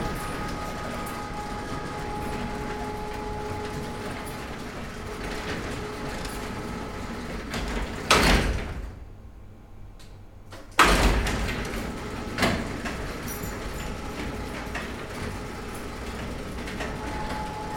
Braunschweig Amtsgericht, Schleuse, rec 2004